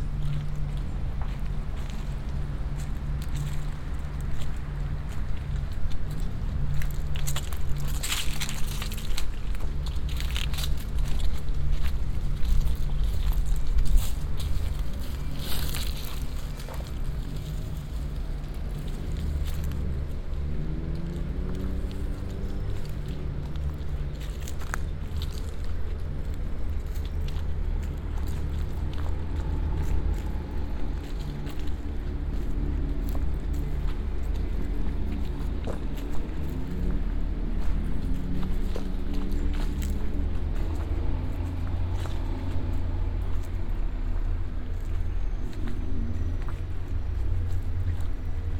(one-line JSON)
{"title": "Cuenca, Cuenca, España - Soundwalking Cuenca: 2015-11-19 Soundwalk along the banks of the Júcar River, Cuenca, Spain", "date": "2015-11-19 13:20:00", "description": "A soundwalk along the banks of the Júcar River, Cuenca, Spain.\nLuhd binaural microphones -> Sony PCM-D100.", "latitude": "40.08", "longitude": "-2.14", "altitude": "915", "timezone": "Europe/Madrid"}